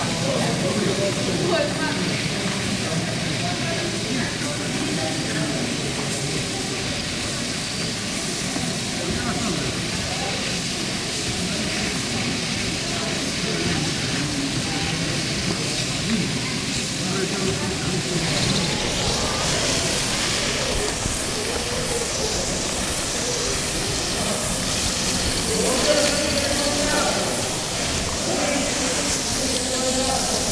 Dubrovnik, Stradun, July 1992, first washing of pavement after months of bombardment - water, finally!
jet of water recorded from ground level, voices of inhabitants and workers